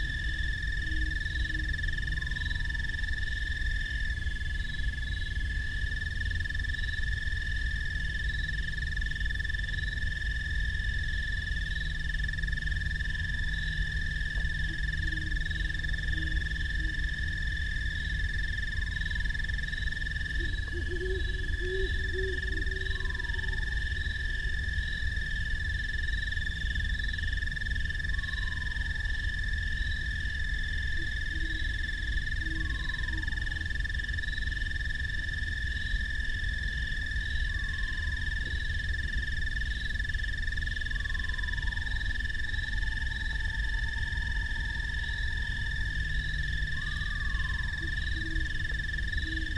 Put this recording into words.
great horned owls and screech owls are featured calling as I circle my tracks in a field, pre-dawn.